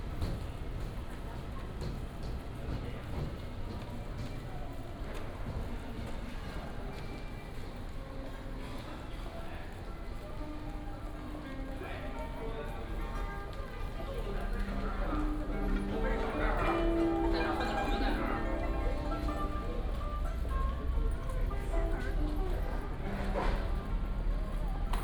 From the station platform, Through the hall, To the direction of the station exit, From the new station to the old station
Central District, Taichung City, Taiwan, 22 March